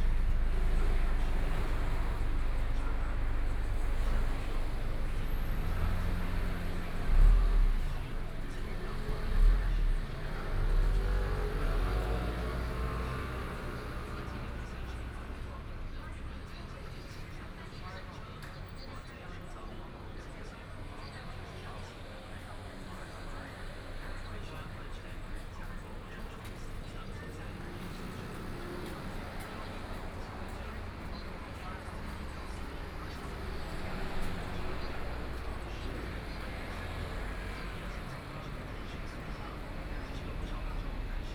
{"title": "Wenlin Rd., Shilin Dist. - on the roadside", "date": "2013-11-15 14:03:00", "description": "Standing on the roadside, Traffic Noise, Broadcast audio shop, The pedestrian, Binaural recordings, Zoom H6+ Soundman OKM II", "latitude": "25.09", "longitude": "121.53", "altitude": "12", "timezone": "Asia/Taipei"}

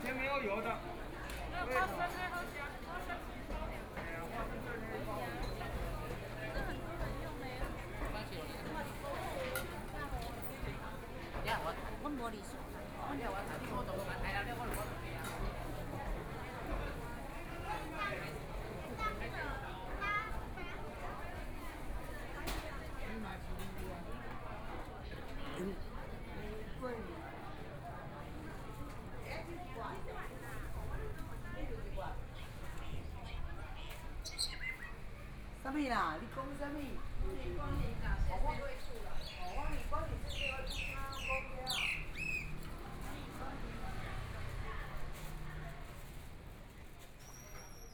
{"title": "Taitung City's Central Market - in the market", "date": "2014-01-16 10:15:00", "description": "Walking through the market inside, Traffic Sound, Dialogue between the vegetable vendors and guests, Binaural recordings, Zoom H4n+ Soundman OKM II ( SoundMap2014016 -3)", "latitude": "22.75", "longitude": "121.15", "timezone": "Asia/Taipei"}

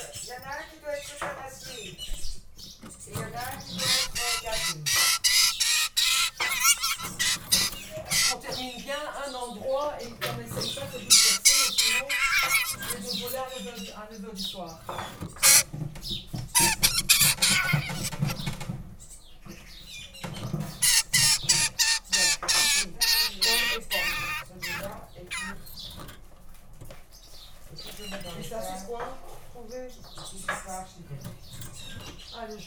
{"title": "Ottignies-Louvain-la-Neuve, Belgique - Birdsbay, hospital for animals", "date": "2016-07-18 20:00:00", "description": "Birdsbay is a center where is given revalidation to wildlife. It's an hospital for animals. This recording is the moment where is given food to the magpies.", "latitude": "50.66", "longitude": "4.58", "altitude": "78", "timezone": "Europe/Brussels"}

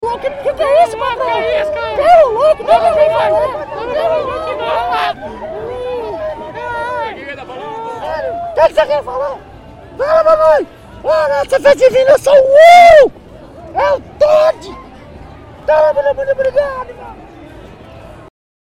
{"title": "Cavalhadas - Festa Popular", "date": "2009-04-11 15:54:00", "description": "mascarados - bricadeiras do povo - na rua da cidade", "latitude": "-15.86", "longitude": "-48.96", "altitude": "785", "timezone": "America/Sao_Paulo"}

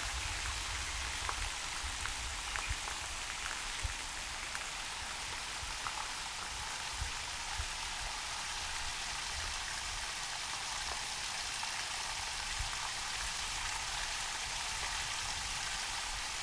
{"title": "Yuanshan Rd., Zhonghe Dist., New Taipei City - Small streams", "date": "2012-02-13 15:17:00", "description": "Small streams, Traffic Sound, Birds singing\nZoom H4n +Rode NT4", "latitude": "24.98", "longitude": "121.48", "altitude": "43", "timezone": "Asia/Taipei"}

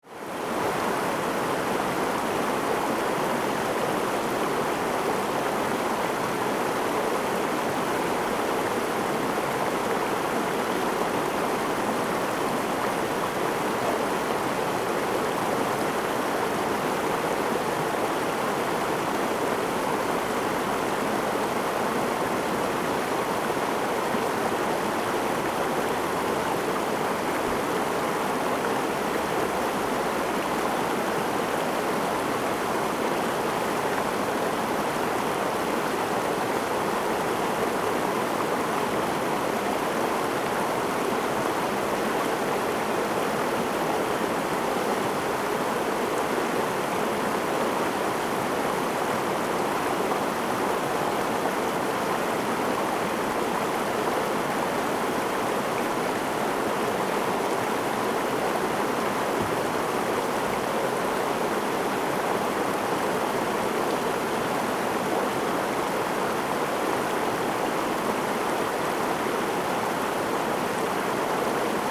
{"title": "大坡池風景區, Chihshang Township - The sound of water streams", "date": "2014-09-07 13:31:00", "description": "The sound of water streams, Very hot weather\nZoom H2n MS+ XY", "latitude": "23.12", "longitude": "121.22", "altitude": "264", "timezone": "Asia/Taipei"}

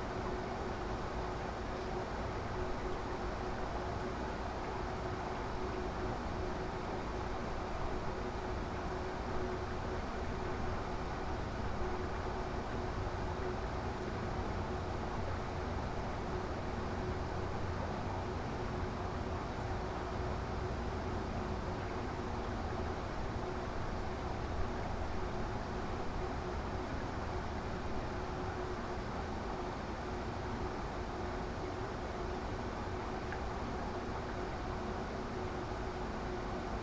{"title": "W Cache La Poudre St, Colorado Springs, CO, USA - El Pomar Drone #2", "date": "2018-04-26 13:35:00", "description": "Resonating metal L-beams under a water heater of sorts.", "latitude": "38.85", "longitude": "-104.83", "altitude": "1841", "timezone": "America/Denver"}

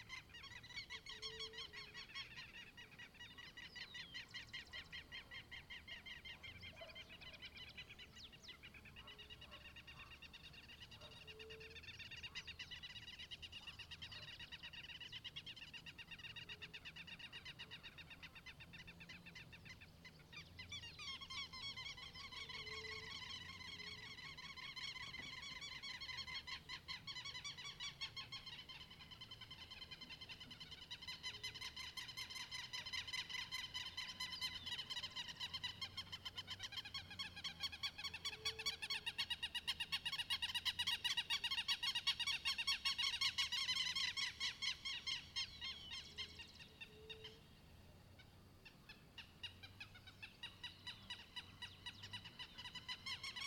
{"title": "Santuario, Antioquia, Colombia - Santuario Soundscape morning", "date": "2013-09-10 06:30:00", "description": "Field recording capture on a rural area in Santuario, Antioquia, Colombia.\nThe recording was made at 6:30 am, cloudy Sunday's morning.\nRecorded with the inner microphones of the Zoom H2n placed at ground's level.", "latitude": "6.12", "longitude": "-75.26", "timezone": "America/Bogota"}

